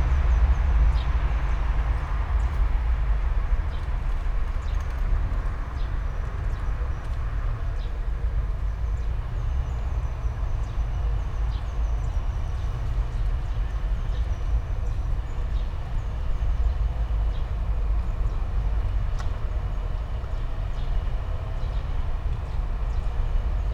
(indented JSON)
{
  "title": "all the mornings of the ... - aug 8 2013 thursday 08:07",
  "date": "2013-08-08 07:41:00",
  "latitude": "46.56",
  "longitude": "15.65",
  "altitude": "285",
  "timezone": "Europe/Ljubljana"
}